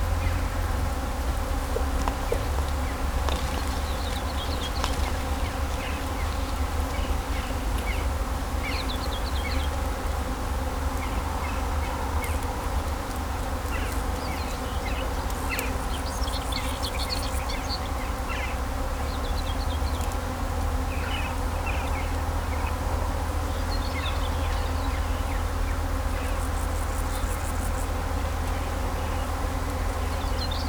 In den Reben, Kallstadt, Deutschland - In Kallstadt summen die Bienen
Natur, Weinreben, Bienen summen, Vögel singen, Fahrgeräusche von Straße, Land
Landkreis Bad Dürkheim, Rheinland-Pfalz, Deutschland, 10 June 2022, 15:00